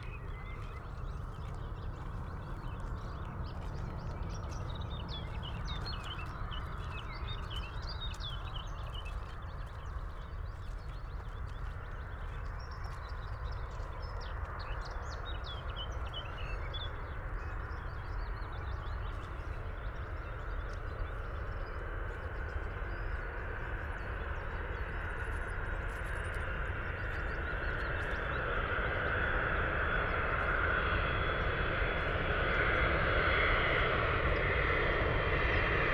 near airport Köln Bonn, Nordschneise, runway - aircraft descending
northern runway, at the edge of Köln-Bonn airport, which is embedded into a beautiful heathland and forest area, with rich biodiverity. The area is in parts a result of the degradation by military training after WW2.
Military exercises with tanks and other vehicles led on the one hand to the fact that the expansion of the forest and bush area was counteracted, a quite useful measure in the nature conservation sense. On the other hand, the loss of valuable biotopes was often associated with this. The practice operation caused ecological damage and resulted in drainage and filling of wetlands. Large areas were used for the barracks. But the military restricted area also meant that nature could develop undisturbed in large parts of the heath. It was also not possible to build residential or commercial buildings on the heath areas.
(Sony PCM D50, DPA4060)